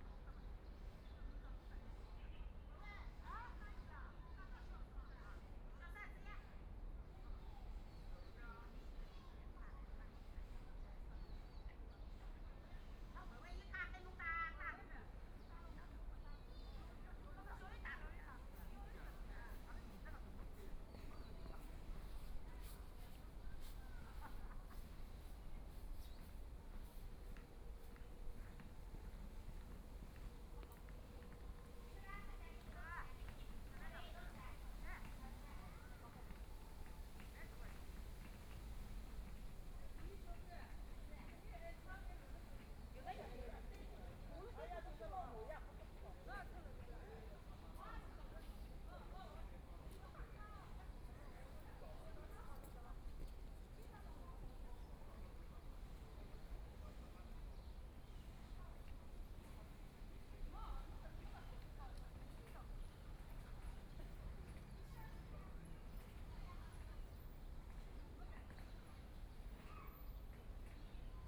Sitting in the park's entrance, Nearby residents into and out of the park, Binaural recording, Zoom H6+ Soundman OKM II
Huangpu, Shanghai, China, 29 November 2013